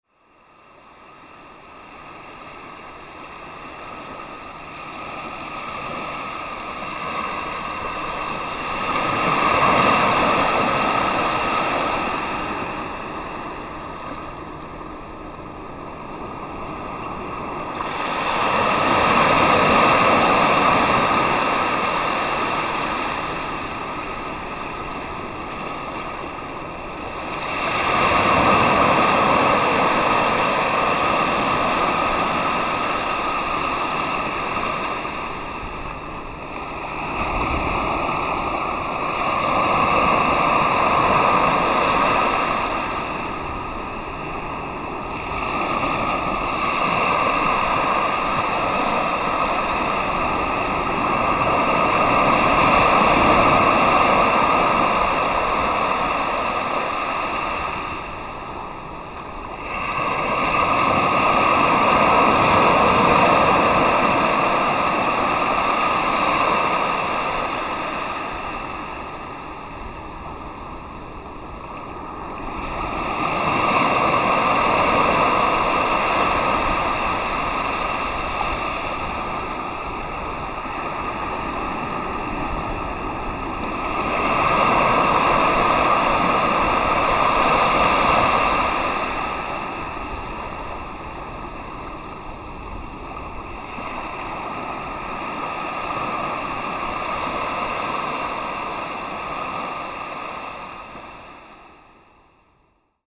LAiguillon-sur-Mer, France - Like a child, listen to the sea into a shell
The sea, listened into a sea snail.